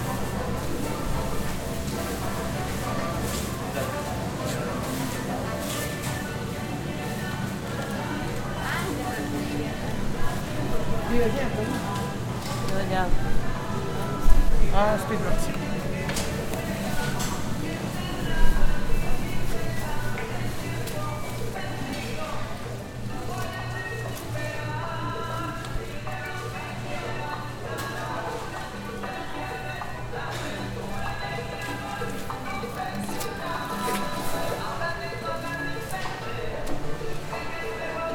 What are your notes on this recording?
Ambiente grabado en tiendas la vaquita de la castellana. Sonido tónico: música, voces, Señal sonora: paquetes, pasos, bolsas, viento, sonido de máquina lectora de precios. Equipo: Luis Miguel Cartagena Blandón, María Alejandra Flórez Espinosa, Maria Alejandra Giraldo Pareja, Santiago Madera Villegas, Mariantonia Mejía Restrepo.